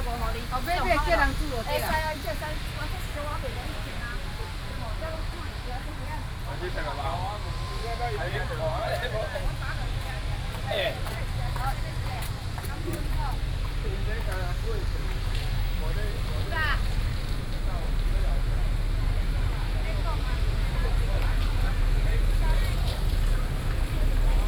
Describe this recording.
Fish Market, Sony PCM D50 + Soundman OKM II